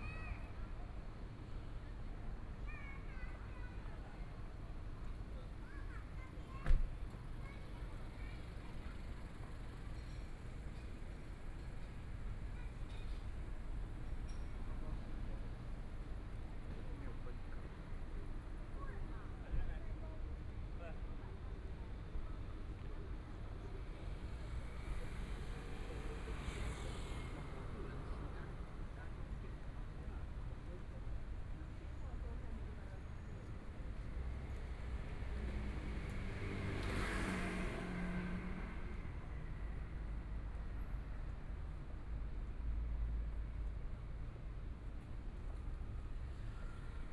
Zhongshan District, Taipei City - on the Road
walking on the road, Environmental sounds, Traffic Sound, Binaural recordings, Zoom H4n+ Soundman OKM II
Zhongshan District, Taipei City, Taiwan